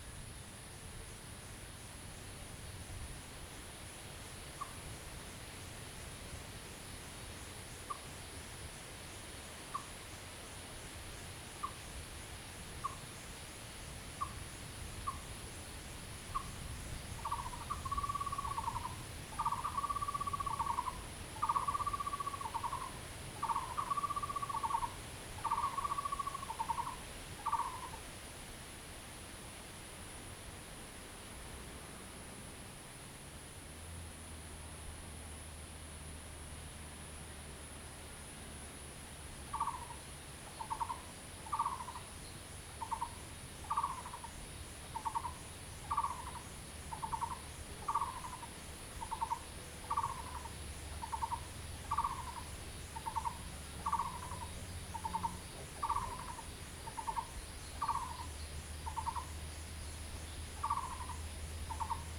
Frogs sound, Bird calls, In the woods
Zoom H2n MS+XY
10 June 2015, ~12:00